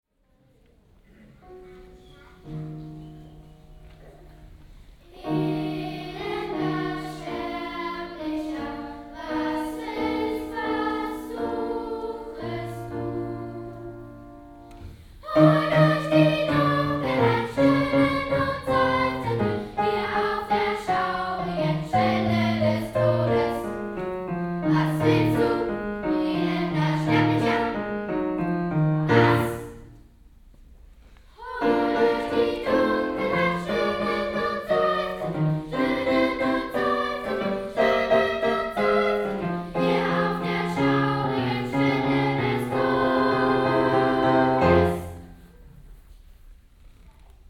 Weinmeisterstr., Freie Waldorfschule - 6.Klasse singt Glucks Orpheo
08.11.2008 10:45, Monatsfeier in Waldorfschule, 6.Klasse singt aus C.W.Glucks Orpheus & Euridice
Berlin, Germany, 8 November 2008